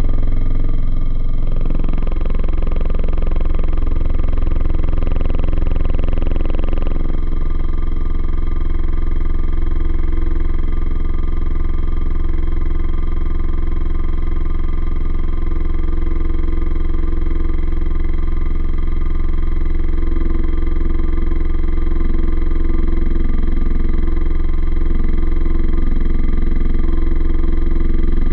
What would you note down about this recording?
washing finished, spin drying program. contact mic recording